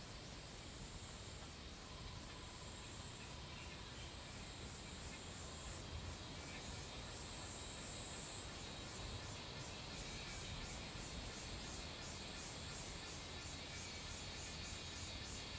桃米里, 埔里鎮, Taiwan - In the woods
Bird sounds, In the woods, Cicadas sound
Zoom H2n MS+XY